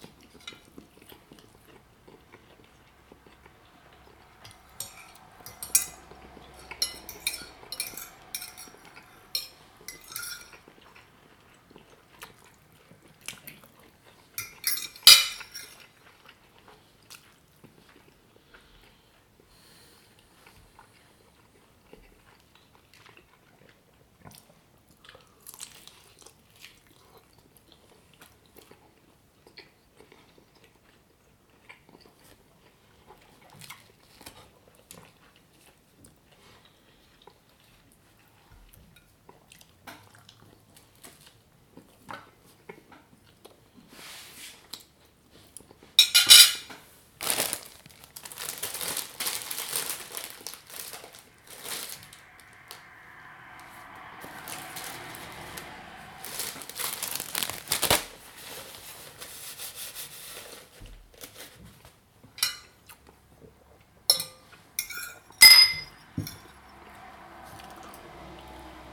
Belgatto PU, Italia - cena in compagnia
una cena in compagnia di un amico, in silenzio senza parlare, solo mangiare
Belgatto Province of Pesaro and Urbino, Italy